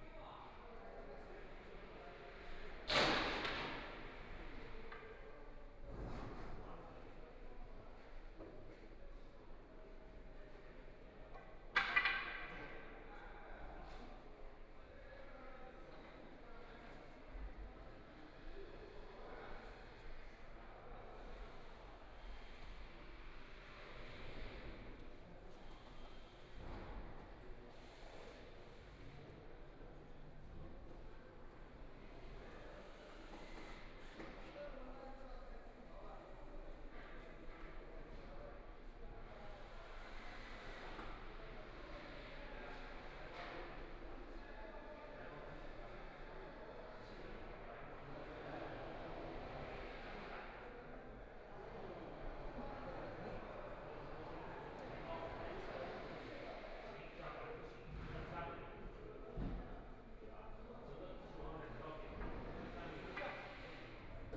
Power Station of Art, Shanghai - Erection of power lines
Construction workers are arranged exhibition, the third floor, The museum exhibition is arranged, Binaural recording, Zoom H6+ Soundman OKM II (Power Station of Art 20131130-1)